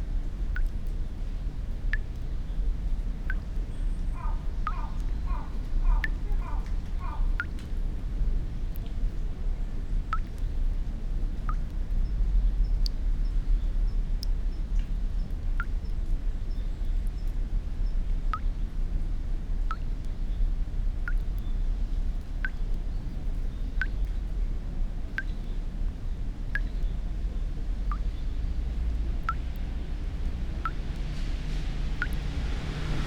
Nanzenji Fukuchicho, Kyoto - chōzubachi, one drop
small garden with beautiful ancient peach tree and one drop water music
writing words
reading poems
fragments of recorded world listens to its future
2 November 2014, 13:07